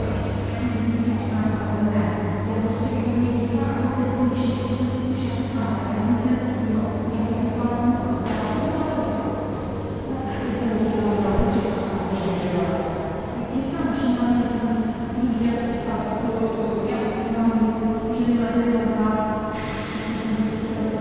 Roudnice nad Labem, Česká republika - vlak a hlasy na nádraží v Roudnici
posunovaný vlak a hlas paní v hale